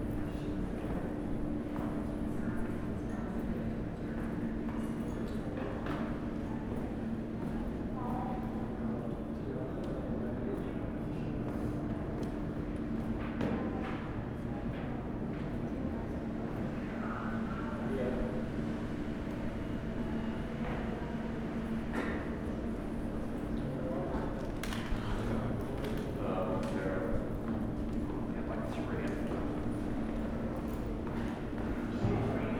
Haymarket NSW, Australia - UTS Library stairwell
Recorded on an Olympus LS-12
13 May 2015